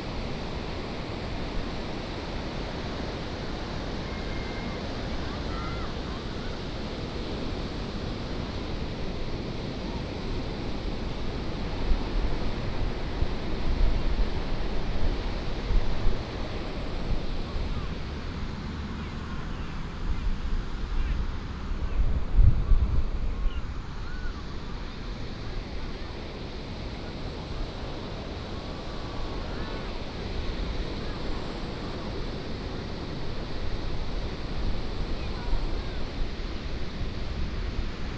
Sound of the waves, Very hot weather
Toucheng Township, Yilan County, Taiwan